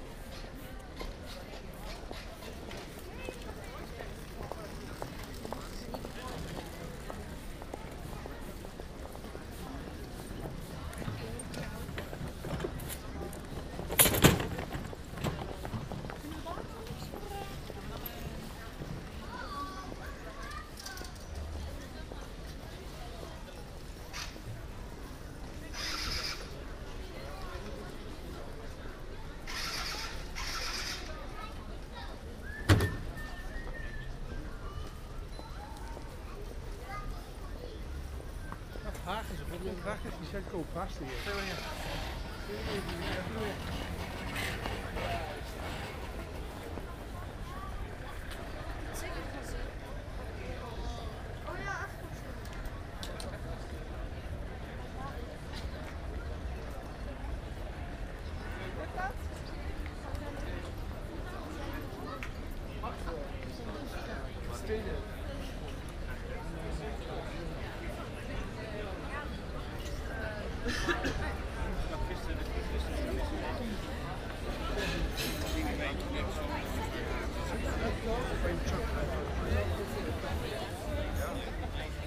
A walk through the city - A walk through the city (part 4 - edited)
A walk through the city The Hague: Binnenhof, Buitenhof, Passage, Spuistraat, Grote Marktstraat with musicians, MediaMarkt.
Binaural recording, some wind.